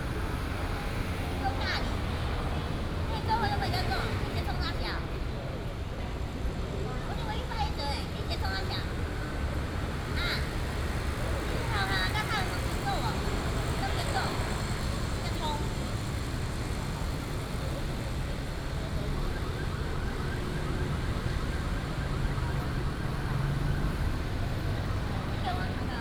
Luchuan E. St., Central Dist., Taichung City - Sitting in the square
Sitting in the square, Traffic Sound